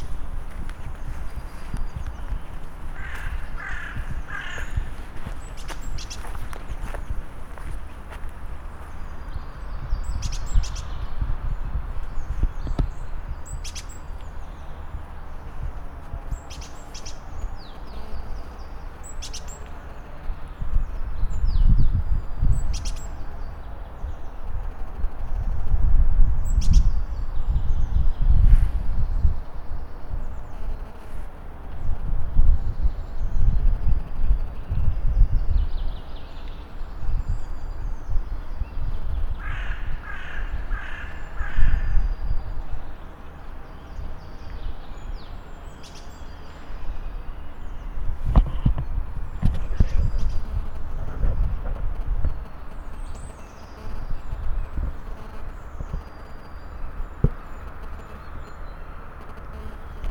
Humlebæk, Danmark - Liv
Krogerup Højskole emmer af liv, også uden for skolen, hvor der er et rigt fugleliv. Denne optagelse er af en flot forårs gåtur på skolens grønne områder.